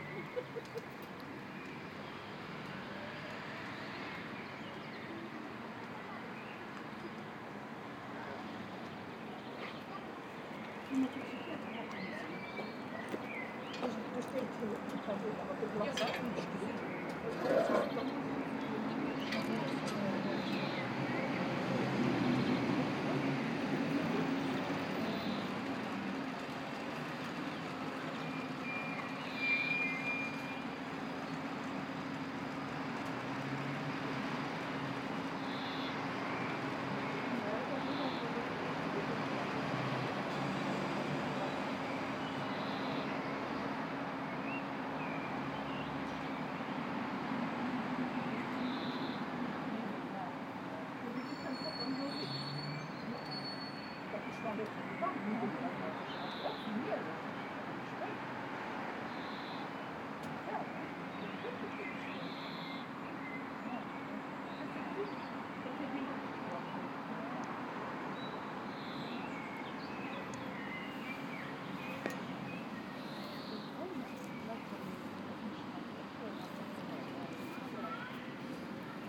{"date": "2014-05-04 17:11:00", "description": "Wait/Listen #66 (04.05.2014/17:11/Avenue Emile Reuter/Luxembourg)", "latitude": "49.61", "longitude": "6.12", "altitude": "307", "timezone": "Europe/Luxembourg"}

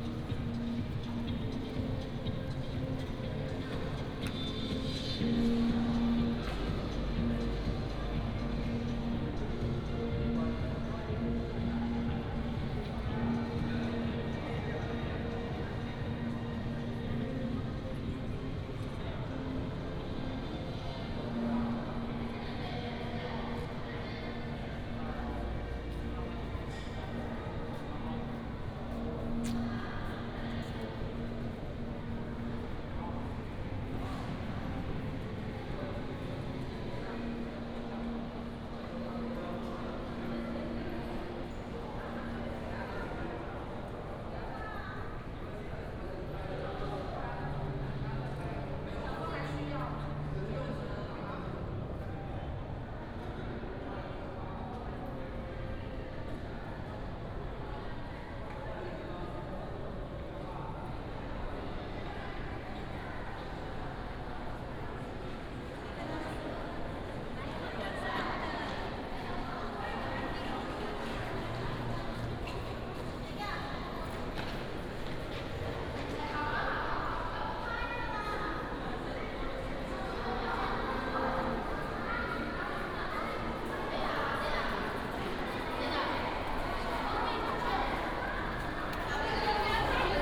{"title": "National Taichung Theater, Xitun Dist., Taichung City - In the first floor of the theater hall", "date": "2017-03-22 12:12:00", "description": "In the first floor of the theater hall, Primary school students, Walk towards exit plaza", "latitude": "24.16", "longitude": "120.64", "altitude": "83", "timezone": "Asia/Taipei"}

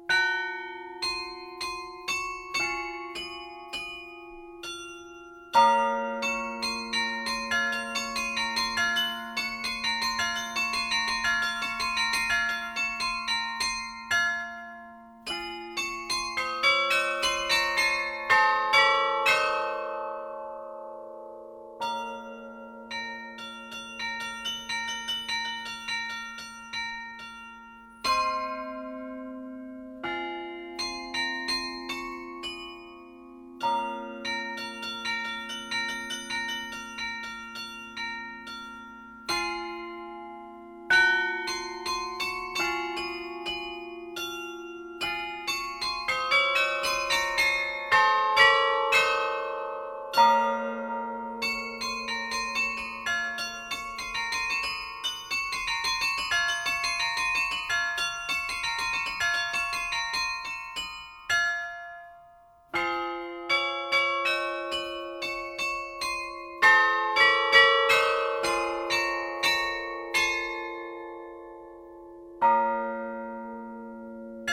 {"title": "Verviers, Belgique - Verviers carillon", "date": "2012-10-30 17:05:00", "description": "Recording of the Verviers carillon, played by Fabrice Renard. This is a poor instrument, needing a deep restauration.", "latitude": "50.59", "longitude": "5.85", "altitude": "163", "timezone": "Europe/Brussels"}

